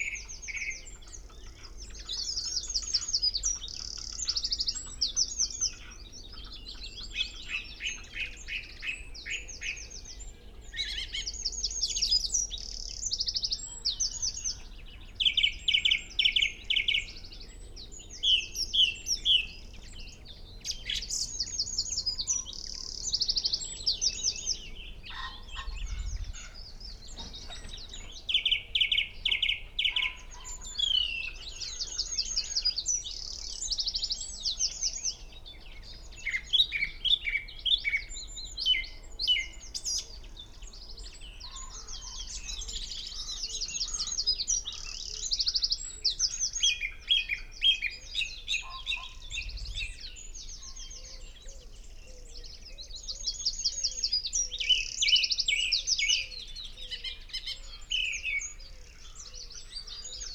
Green Ln, Malton, UK - song thrush ... mainly ..
song thrush ... mainly ... xlr mics in a SASS to Zoom H5 ... SASS wedged into the crook of a tree ... bird calls ... song ... from ... pheasant ... buzzard ... crow ... wren ... wood pigeon ... red-legged partridge ... dunnock ... blackcap ... chaffinch ... linnet ... willow warbler ... long-tailed tit ... blue tit ... some background noise ... and a voice ...
16 April 2020, ~06:00